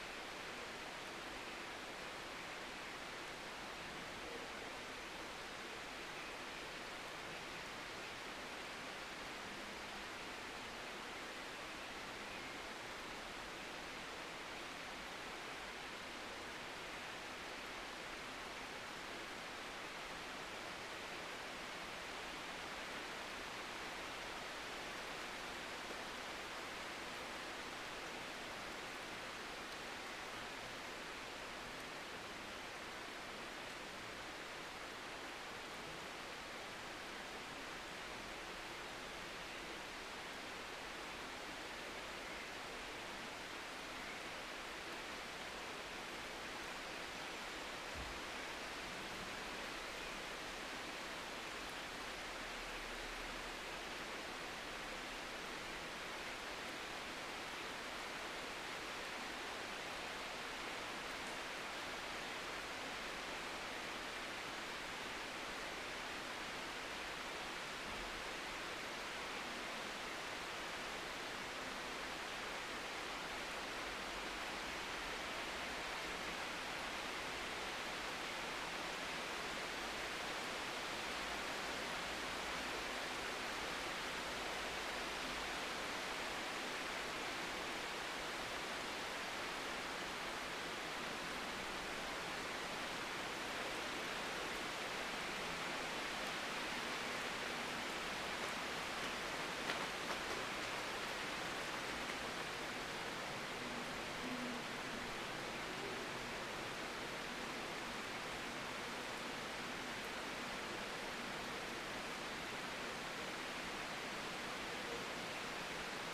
Cologne, Heavy Rain
Rain falling on trees in an inner court yard
Cologne, Germany, 21 July, 09:41